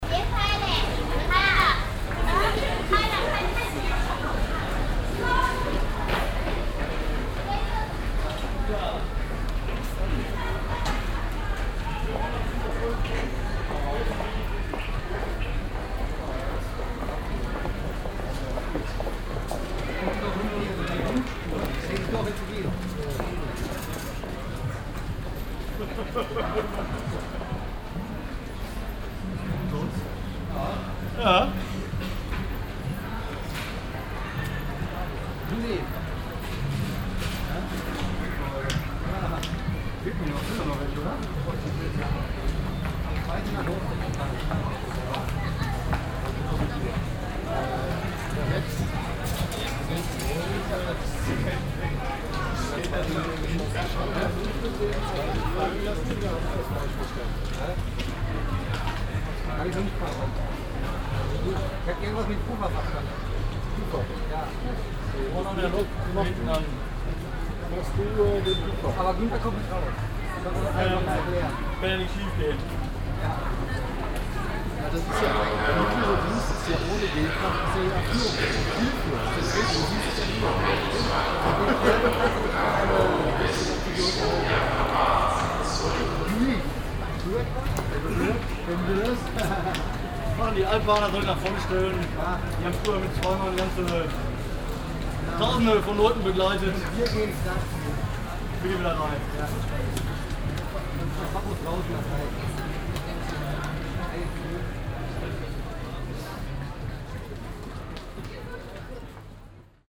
betrieb in der eingangshalle des dortmunder hbf am frühen abend
soundmap nrw: topographic field recordings & social ambiences
hbf, eingangshalle